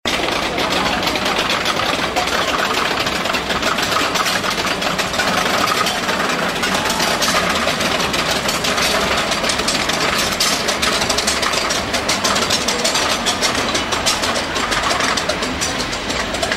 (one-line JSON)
{"title": "Santa Monica, USA, rollercoaster, recorded by VJ Rhaps", "latitude": "34.01", "longitude": "-118.50", "timezone": "Europe/Berlin"}